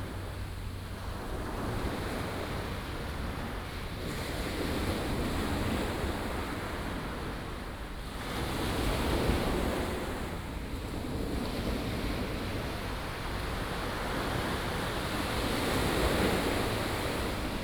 淺水灣, 三芝區後厝里, New Taipei City - Sound of the waves
Waterfront Park, At the beach, Sound of the waves, Aircraft flying through